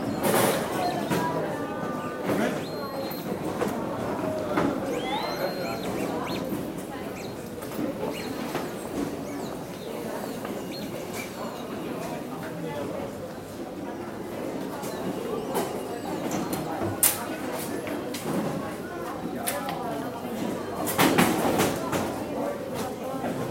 koeln airport, security check - koeln-bonn airport, security check
recorded july 18, 2008.